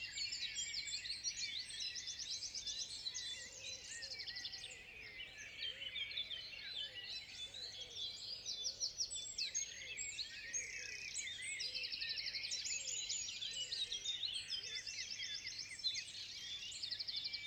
5H46 EN BORD DE SÈVRE, réveil merveilleux dans les Marais du Poitou. Les acteurs naturels sont Hyperactifs entre 2 averses en ce printemps souvent pluvieux!